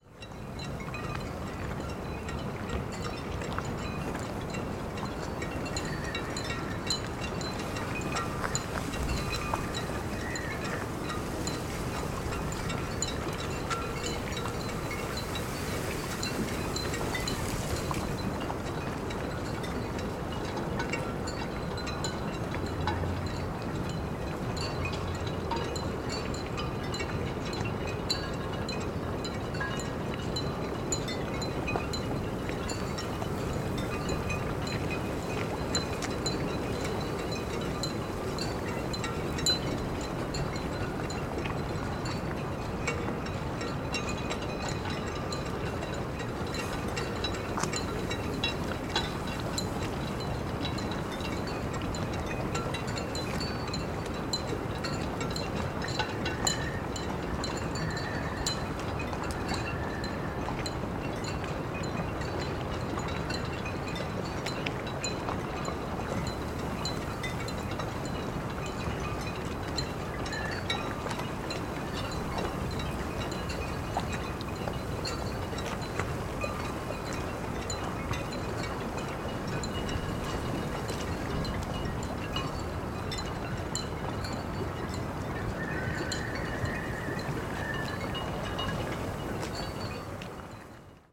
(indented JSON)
{
  "title": "Ploumanach, Port, France - Port at Night, Carillons of Boats, Wind, Far distance",
  "date": "2015-01-03 23:22:00",
  "description": "La nuit sur le port de ploumanac'h, les bateaux barbotent énergiquement, le vent fait siffler les cordages et les mats s'entrechoquent.Un peu de vent dans un arbres\nA night at the Port, Boats are splashing, wind is whistling, masts are chiming.\n+ a little wind in a tree\n/Oktava mk012 ORTF & SD mixpre & Zoom h4n",
  "latitude": "48.83",
  "longitude": "-3.49",
  "altitude": "7",
  "timezone": "GMT+1"
}